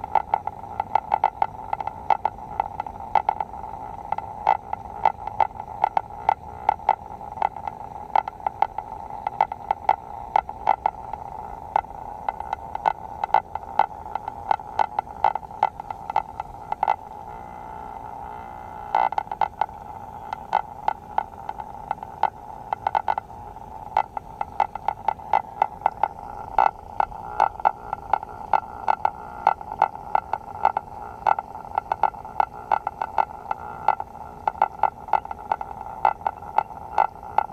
樹梅坑溪, Tamsui Dist., New Taipei City - Fault lights
Fault lights
Sony PCM D50